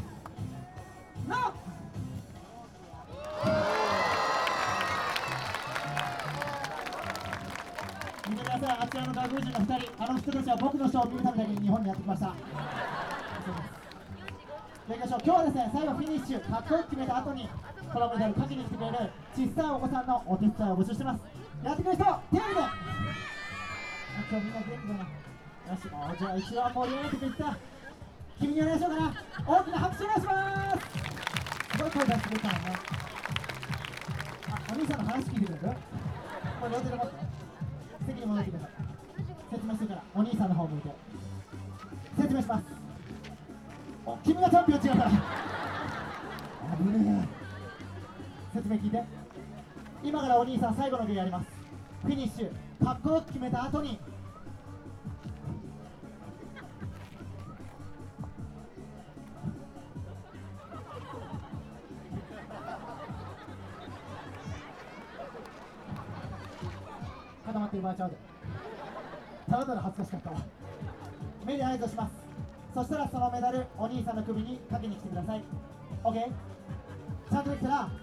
performer entertaining visitors, showing juggling tricks. recorded behind his speaker so his voice is muffled and remote in contrast to the crisp voices of the crowd.
30 March, 18:27